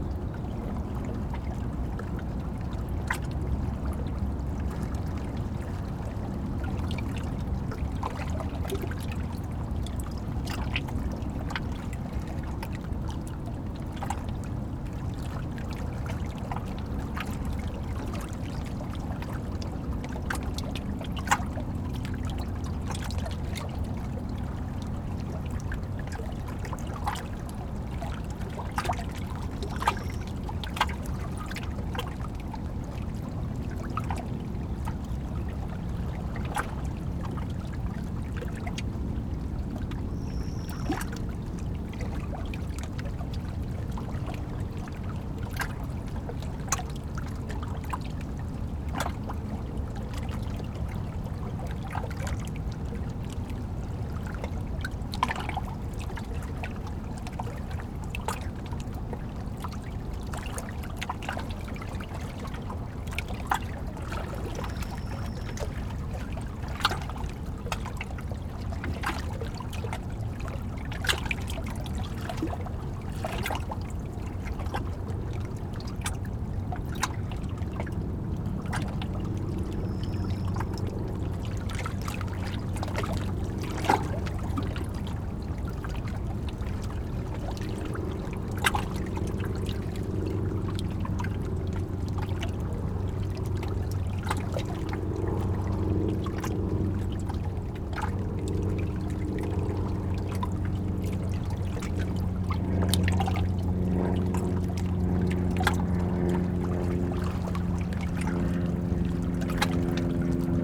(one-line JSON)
{"title": "Red Flynn Dr, Beacon, NY, USA - Hudson River at Pete And Toshi Seeger Riverfront Park", "date": "2017-10-05 15:20:00", "description": "Hudson River at Pete And Toshi Seeger Riverfront Park, Beacon, NY. Sounds of the Hudson River. Zoom H6", "latitude": "41.51", "longitude": "-73.99", "altitude": "2", "timezone": "America/New_York"}